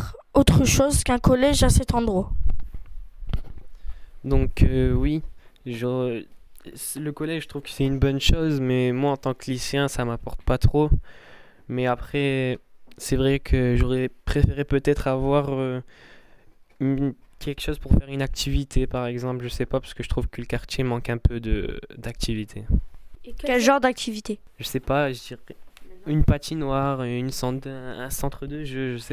Interview de Sofiane, un lycéen en stage
Roubaix, France